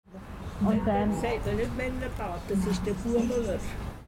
Marzili, der Buebeler
Der Kosename für das Männerbad im Marzili
Bern, Schweiz